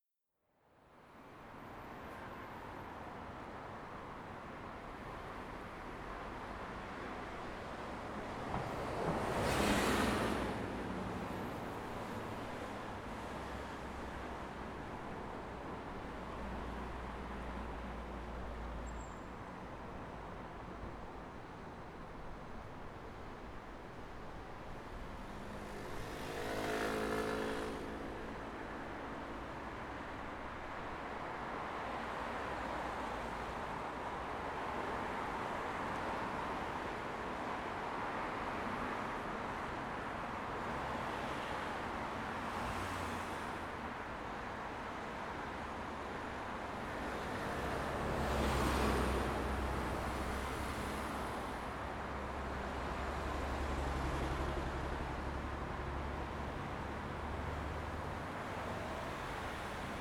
대한민국 서울특별시 서초구 교보타워사거리 - Kyobo Tower Junction
Kyobo Tower Junction, Cars and motorcycle passing by
교보타워사거리, 차도 자동차 등.
5 October 2019